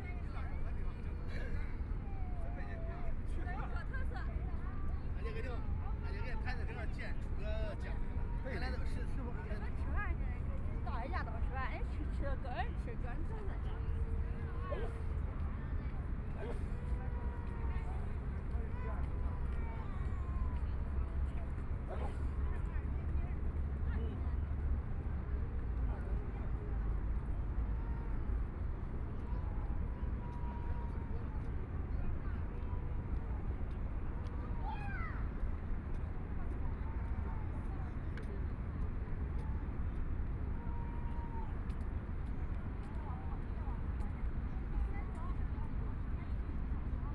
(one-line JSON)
{"title": "the Bund, Shanghai - Stood by the river", "date": "2013-12-02 11:46:00", "description": "sound of the Boat traveling through, Many tourists, In the back of the clock tower chimes, Binaural recordings, Zoom H6+ Soundman OKM II", "latitude": "31.24", "longitude": "121.49", "timezone": "Asia/Shanghai"}